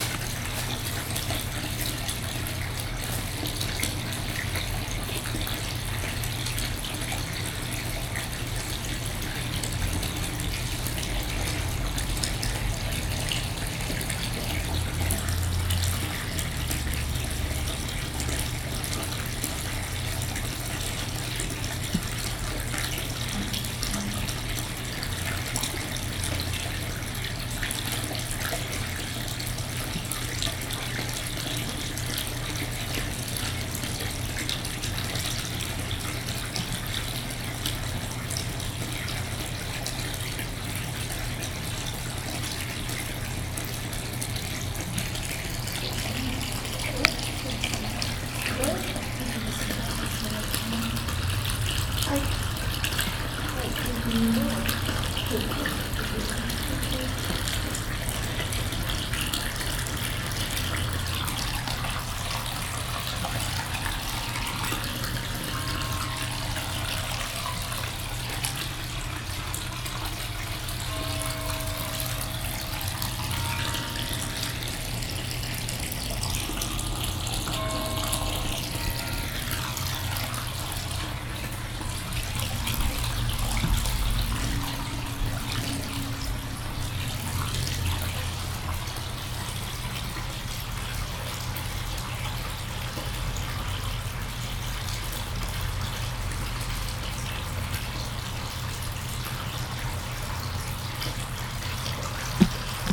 Seminary Av:Outlook Av, Oakland, CA, USA - Mills College Creek
This is a recording taken at Mills College by a creek with a Zoom H4n flash recorder. This part of the stream runs through a resonant tunnel. There were other people around during the recording.